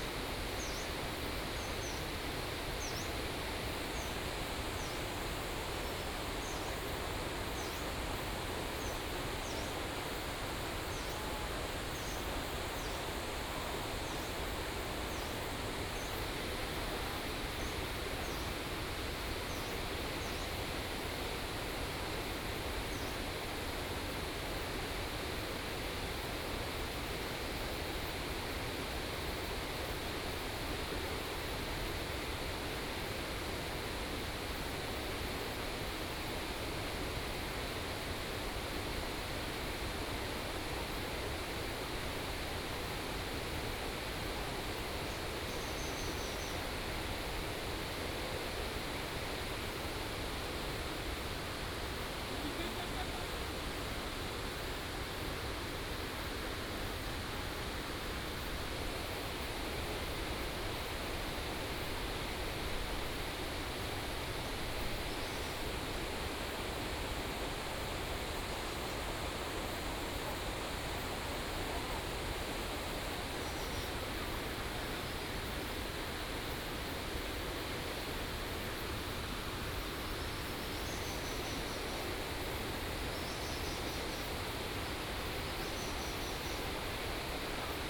燦光寮遺跡, 雙溪區新北市 - Ancient ruins
In the mountains, Stream sound, Ancient ruins, the ancient trail
Sonu PCM D100 XY
New Taipei City, Taiwan, 5 November 2018